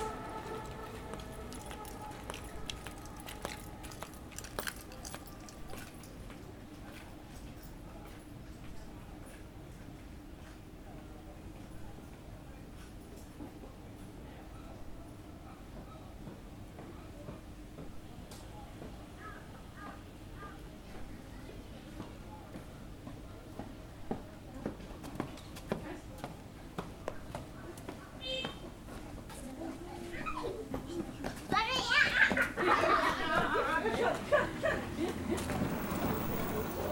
Recorded with a Zoom H4N while sitting in Yannian hutong, on the national day of 2019, while most of the center of Beijing was locked down.